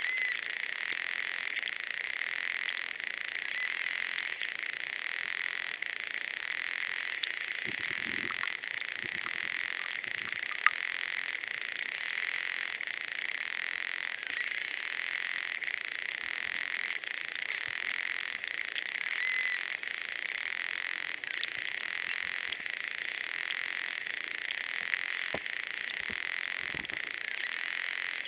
hydrophone recording in Mooste lake #2: Estonia
18 May 2009